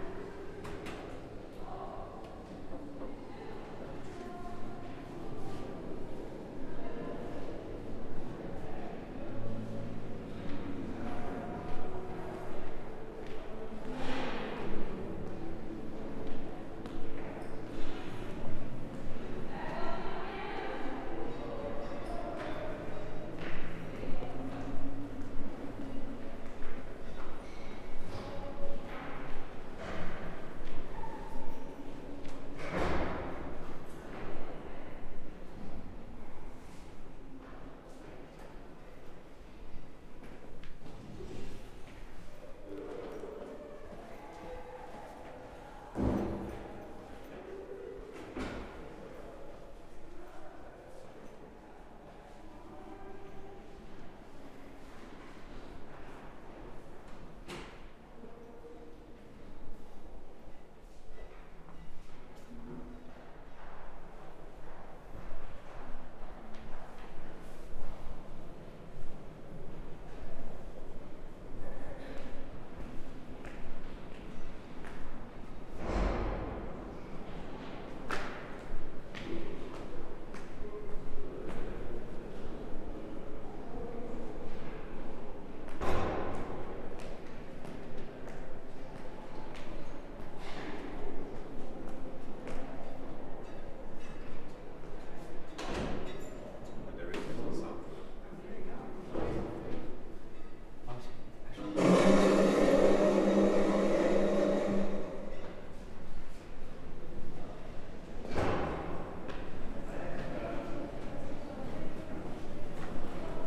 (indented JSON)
{
  "title": "Gerrit Rietveld Academie - Change of Anouncement",
  "date": "2019-04-10 12:23:00",
  "description": "During the day the intercom made an anouncement that was rather unusual.",
  "latitude": "52.34",
  "longitude": "4.86",
  "altitude": "2",
  "timezone": "GMT+1"
}